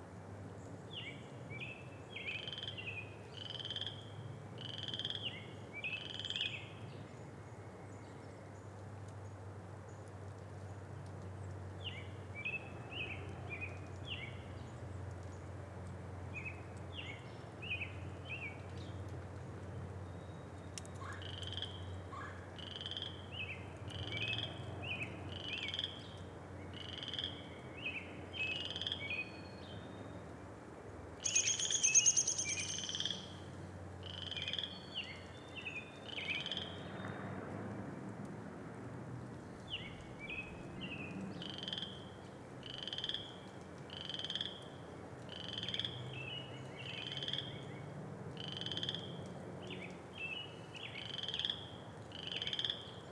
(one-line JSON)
{"title": "Coralville, IA, USA - Bullfrogs along Clear Creek", "date": "2021-04-17 10:04:00", "description": "Bullfrogs along the Clear Creek Trail in Coralville, Iowa recorded with Rode NT5 microphones in an A-B configuration into a Sound Devices Mixpre-6.", "latitude": "41.68", "longitude": "-91.59", "altitude": "205", "timezone": "America/Chicago"}